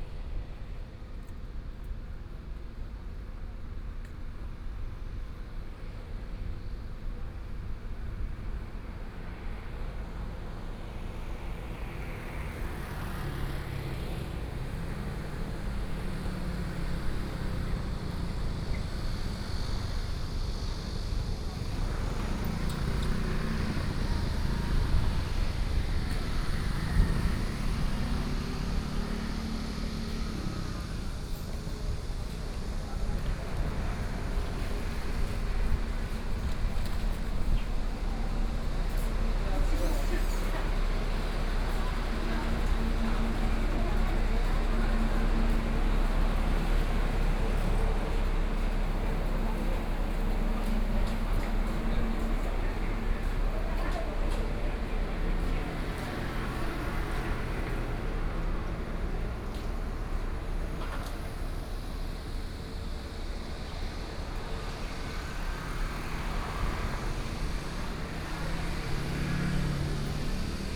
Linsen Rd., Yilan City - walking on the Road
walking on the Road, Traffic Sound, Hot weather
Sony PCM D50+ Soundman OKM II
5 July 2014, 10:28